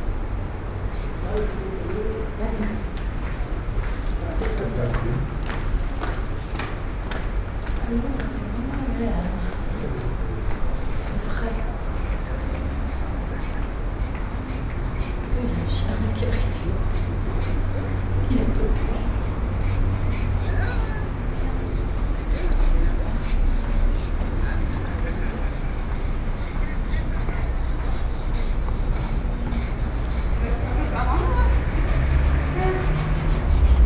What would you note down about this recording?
Cahors, Pont Valentré / Cahors, the medieval Valentré Bridge.